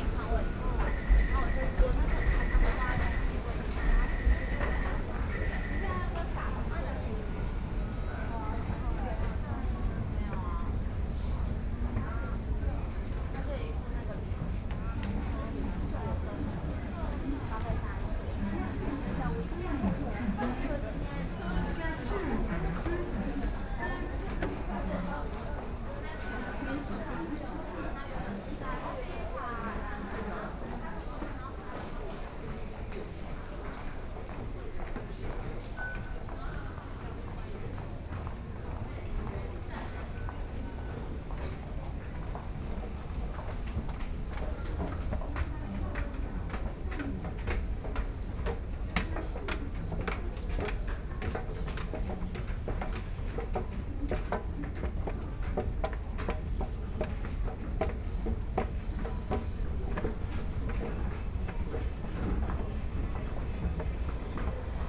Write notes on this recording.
On the platform waiting for the train, Binaural recordings, Sony PCM D50 + Soundman OKM II